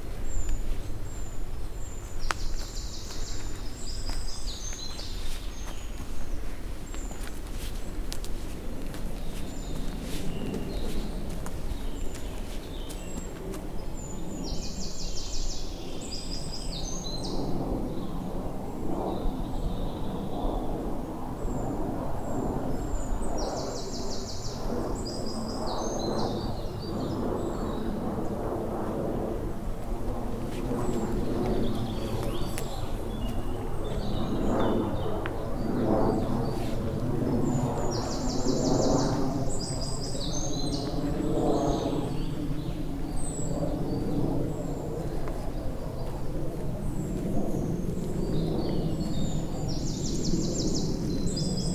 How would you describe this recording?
Waldgeräusche im Aufstieg zum Stierenberg